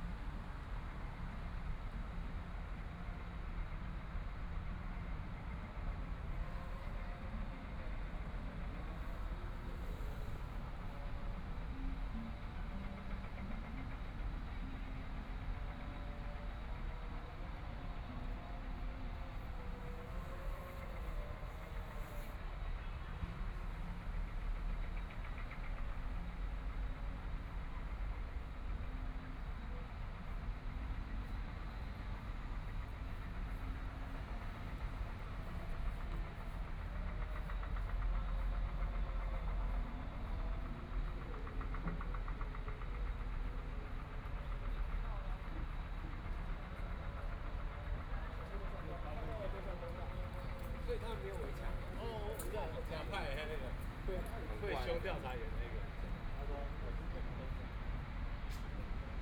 台東市文化里 - The crowd
The crowd, The distance of the Buddhist Puja chanting voice, Construction noise, Binaural recordings, Zoom H4n+ Soundman OKM II